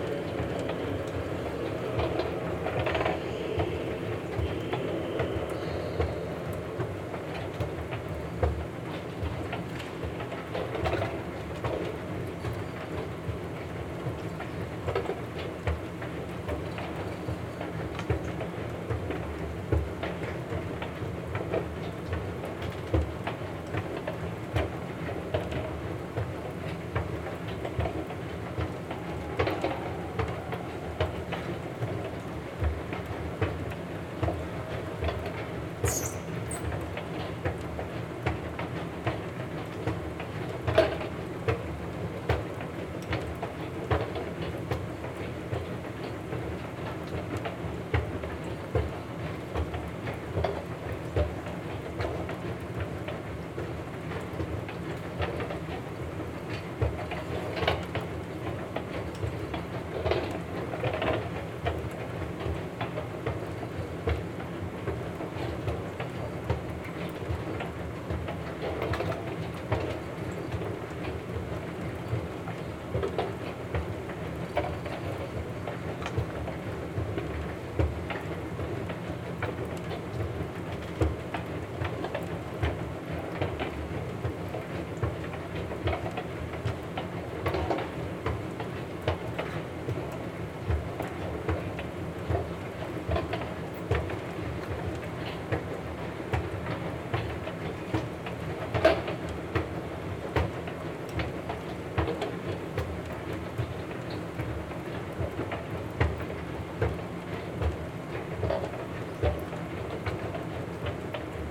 Park Ave, New York, NY, USA - An Escalator at Grand Central
Sound of an escalator at Grand Central.